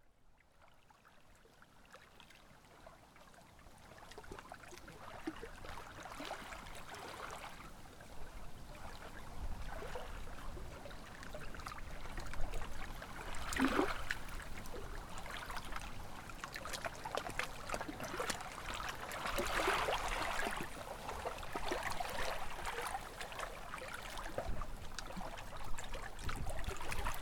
Unnamed Road, Zweden - Lakesides to silence
lakewise, lakeside, lake sound - a few days in complete silence walking around. The track takes 7 minutes and takes you from watersounds to the silence of the forests. (Recorded with Zoom4HN).
Sweden, 4 September 2015